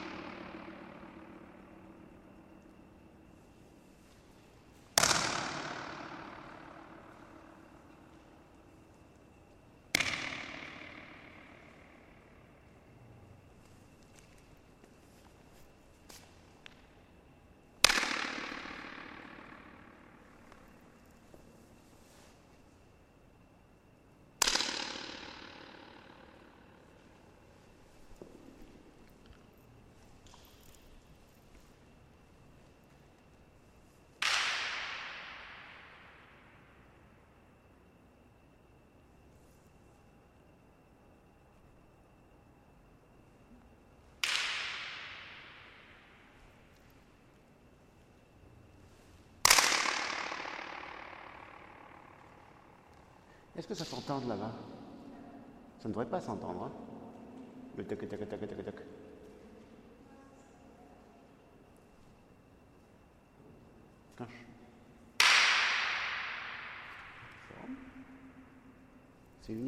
In a square room of Ujazdow Castle, Warszawa, with walls, floor & Ceiling made of smooth stone, & (important detail) the top of the walls a little rounded to join the ceiling, here are a few basic exercices of « barehand acoustics ». With fingers snapping, hands clapings & steps taping one can reveal the reverberation & the floating echoe from the center point of the room. With the voice speaking & then singing, one can find its resonnance frequency (around 320Hz here), & almost measure its size by the ear...
Warsaw, Poland, 6 August 2000, ~16:00